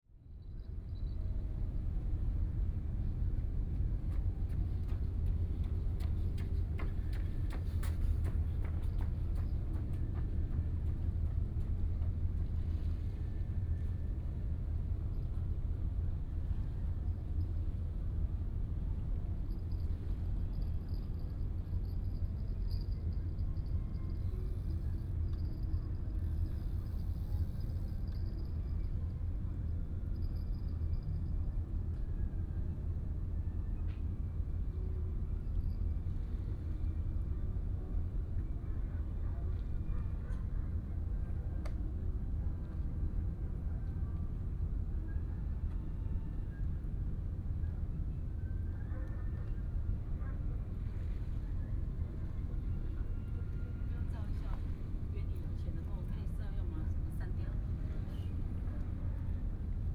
Sitting on the river bank, Ambient sound, Footsteps
New Taipei City, Taiwan, 7 April